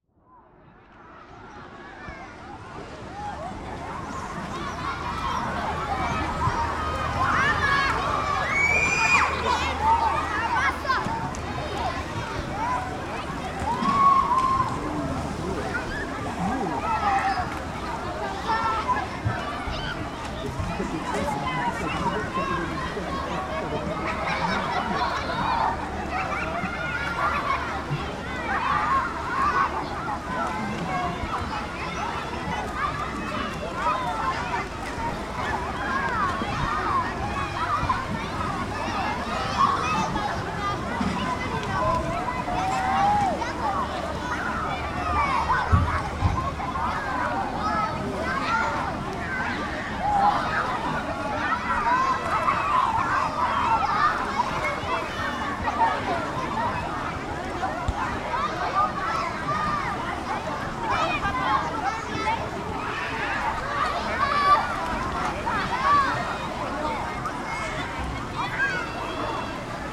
poolside madness, catching the last summer sunrays of the year.
Tecklenburg, Germany, 31 August 2008, 16:12